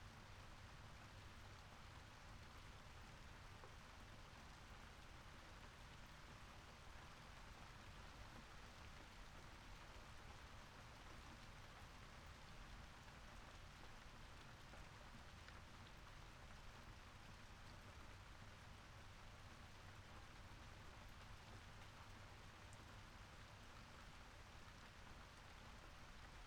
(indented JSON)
{
  "title": "Chapel Fields, Helperthorpe, Malton, UK - occasional thunder ...",
  "date": "2019-08-04 21:15:00",
  "description": "occasional thunder ... SASS on a tripod ... bird calls ... starling ... background noise ... traffic etc ...",
  "latitude": "54.12",
  "longitude": "-0.54",
  "altitude": "77",
  "timezone": "Europe/London"
}